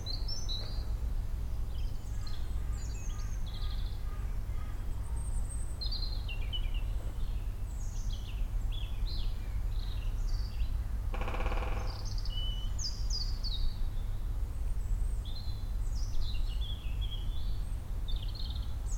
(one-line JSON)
{"title": "Cuckoo Lane, Headington, Oxford, UK - Woodpecker and DIY duet", "date": "2014-04-01 10:00:00", "description": "I was on my way to work when I noticed the sound of a Woodpecker up in the trees above the high wall to my left. Leant against the wall and listened to the woodpecker, then noticed that on the other side of the path, beyond the fence, someone was doing some sort of DIY. So you can hear on the one side the woodpecker and on the other side of the path, occasional hammerings and saw-rippings. You can also hear distantly the traffic of London Road; Crows; many other birds; and the occasional cyclist or walker using that path. It is a great path and I love walking on it. Often hear Robins, too.", "latitude": "51.76", "longitude": "-1.23", "altitude": "102", "timezone": "Europe/London"}